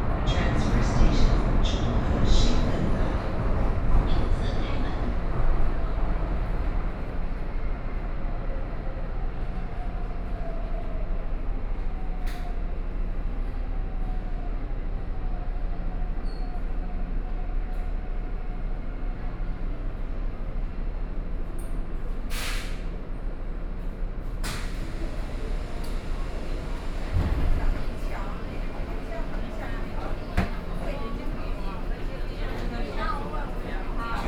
Roosevelt Rd., Taipei City - Tamsui Line (Taipei Metro)
from Taipower Building Station to Chiang Kai-Shek Memorial Hall Station, Binaural recordings, Sony PCM D50 + Soundman OKM II
Taipei City, Taiwan